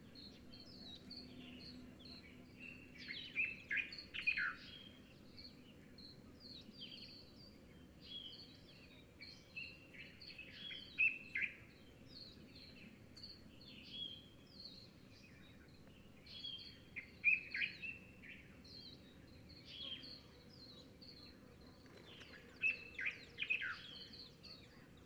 Ayn Hamran, Dhofar, Oman - birds at sunrise
small part of the dawn chorus in Ayn Hamran.
عمان, 14 January 2014